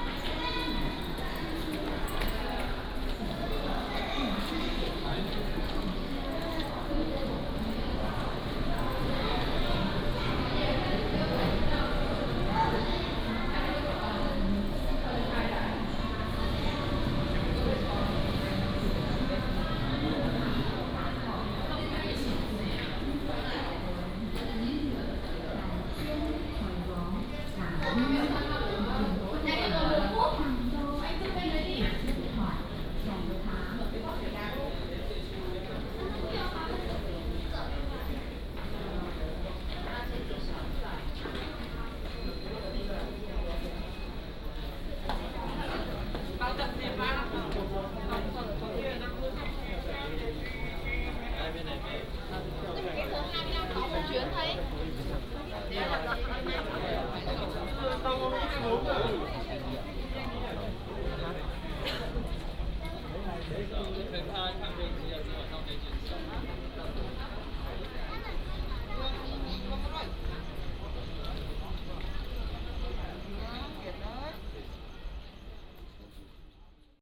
彰化車站, Taiwan - To the station platform
walking in the Station, From the station hall to the platform
31 January 2017, 19:18